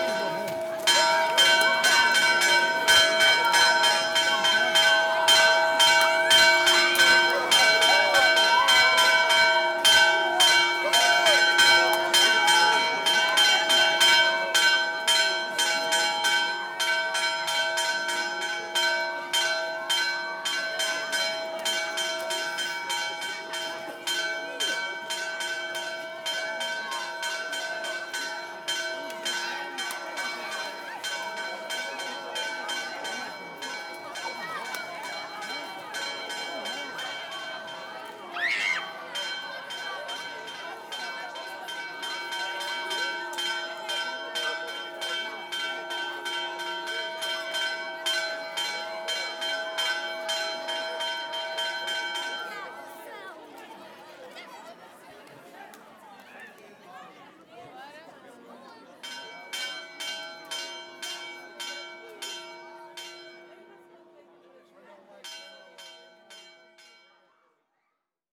Largo de São Gonçalinho, Aveiro, Portugal - Chuva de cavacas e sinos
Chuva de cavacas e sinos nas Festas de S. Gonçalinho